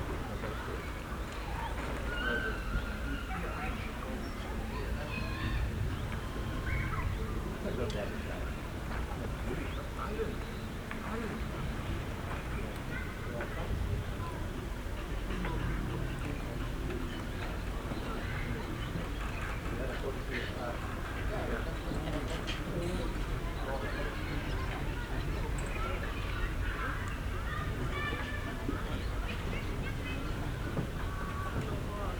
the city, the country & me: august 4, 2012
Workum, The Netherlands